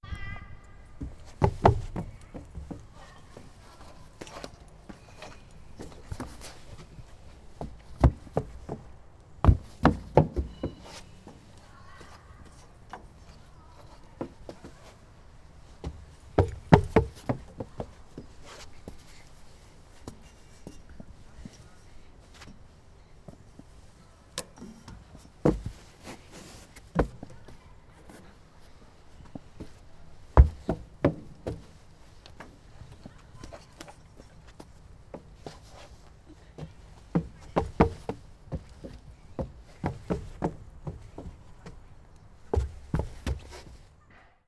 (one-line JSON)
{"title": "munich - playground01", "date": "2010-11-17 13:30:00", "description": "playground sounds, munich, lela", "latitude": "48.16", "longitude": "11.58", "altitude": "517", "timezone": "Europe/Berlin"}